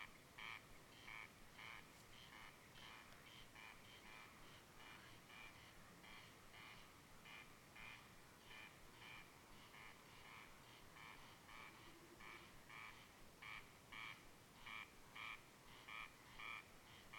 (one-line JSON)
{"title": "crex crex and evening silence, Rasina", "date": "2010-06-28 00:12:00", "description": "phasing crex crex calls in the field", "latitude": "58.22", "longitude": "27.20", "altitude": "38", "timezone": "Europe/Tallinn"}